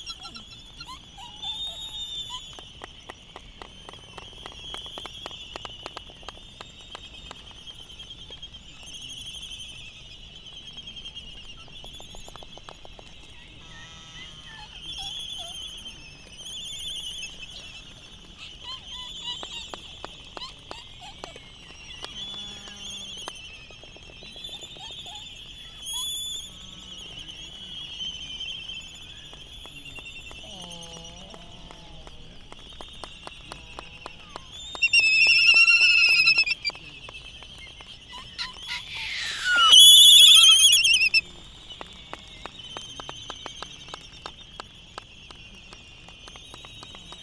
United States Minor Outlying Islands - Laysan albatross dancing ...
Laysan dancing ... Sand Island ... Midway Atoll ... open lavaier mics on mini tripod ... background noise and voices ...